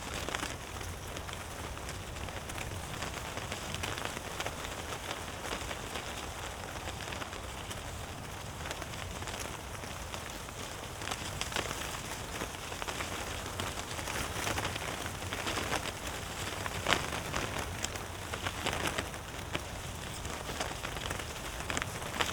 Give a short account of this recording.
former flight control point on Tempelhof airport, the fence is covered with a lot of paper stripes fluttering in the wind. (SD702, AT BP4025)